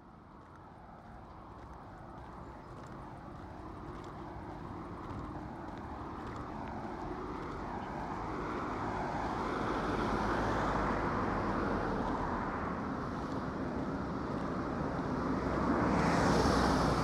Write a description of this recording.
Long fret train passing slowly near the station, cars on the road. Tech Note : Sony PCM-M10 internal microphones.